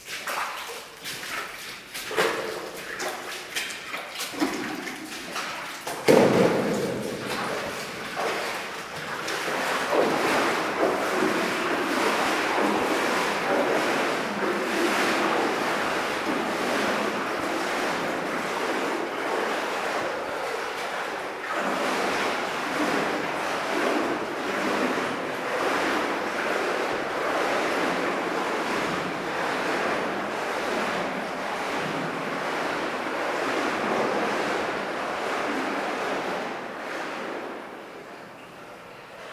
Audun-le-Tiche, France - Flooded tunnel
Exploring a flooded tunnel. We are trying to reach another district, but it's impossible because it's totally flooded.